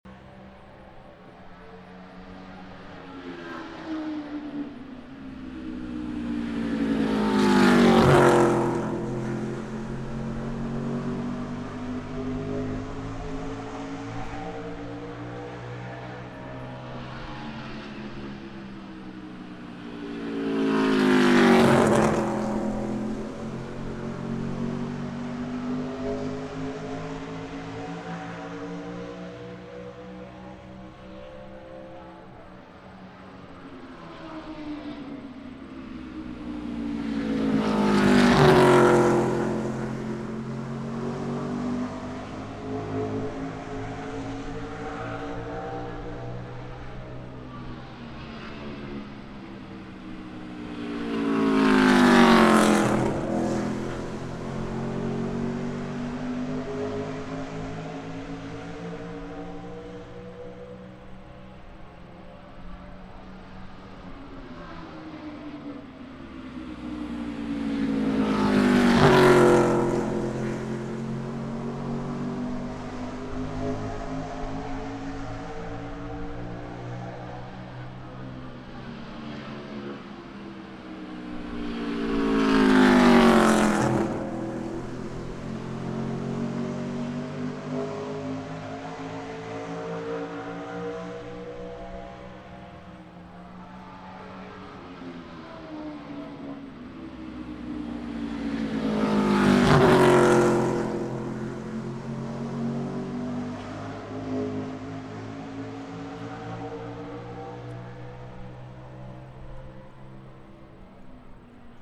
The Milwaukee Mile Raceway
A few stock cars taking practice laps. Olympus LS-10.